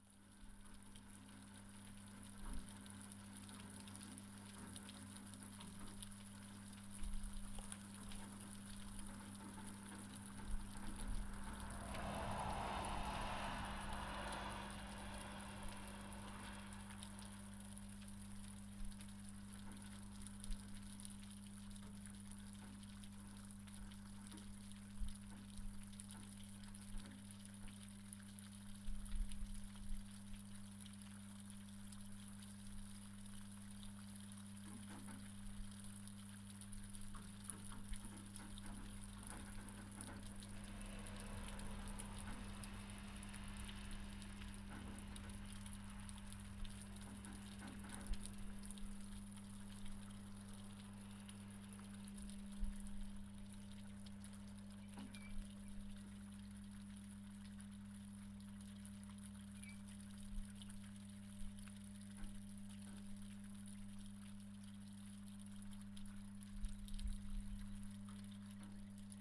Kehra alajaam, Paasiku, Harju maakond, Estonia - Melting snow
Snow is melting and dripping from the roof. An electrical substation can be heard in the background. Trucks are passing by.
Harju maakond, Eesti, 14 April 2020, 12:19